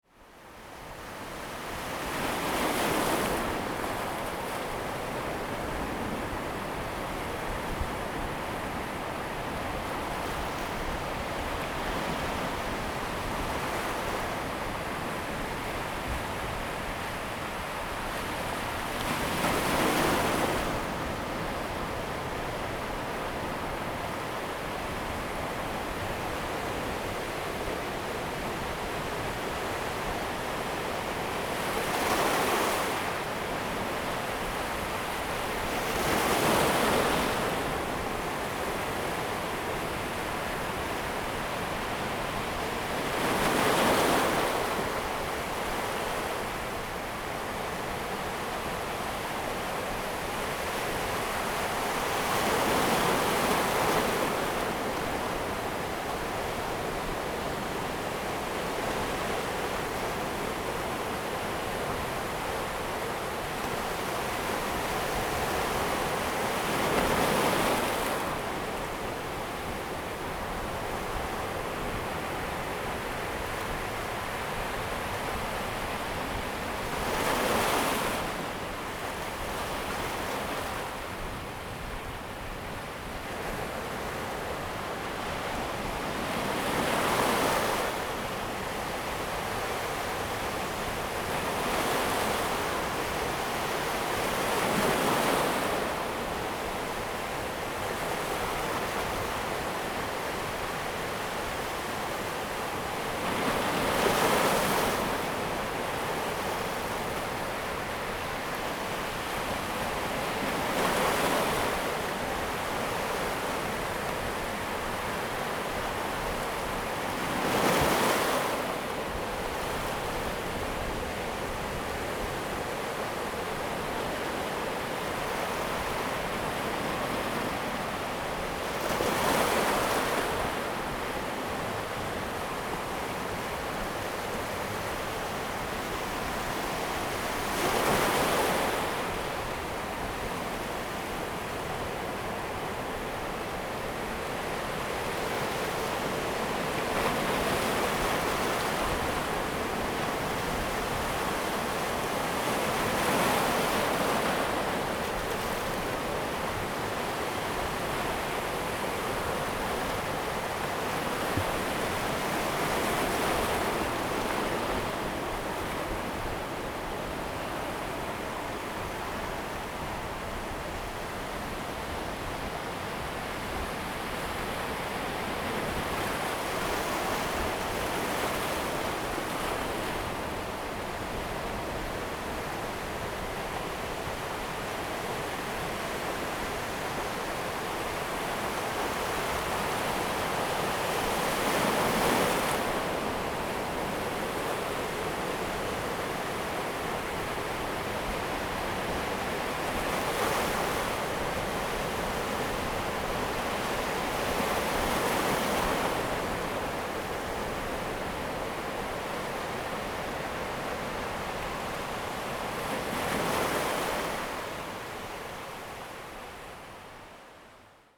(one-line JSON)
{"title": "東河村, Donghe Township - Sound of the waves", "date": "2014-09-06 12:59:00", "description": "Sound of the waves, Rocky shore, Very hot weather\nZoom H2n MS+ XY", "latitude": "22.96", "longitude": "121.30", "altitude": "5", "timezone": "Asia/Taipei"}